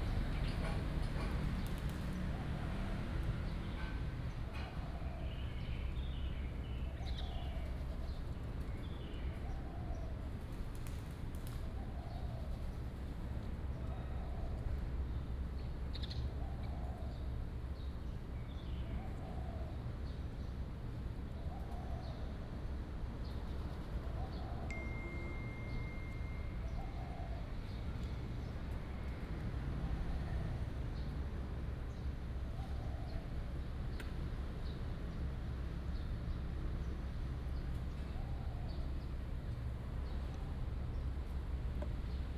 Ln., Lishui St., Da’an Dist., Taipei City - Temporary park
Bird calls, traffic sound, Temporary park, The building has been removed, Buyer is temporary park, The future will cover building